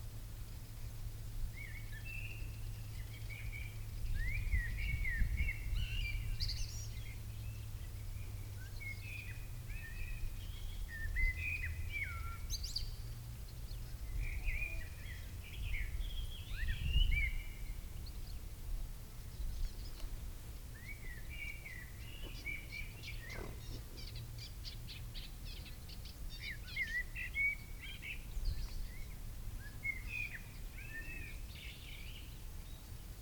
Birds call late afternoon under the former quarry.
11 June 2015, ~16:00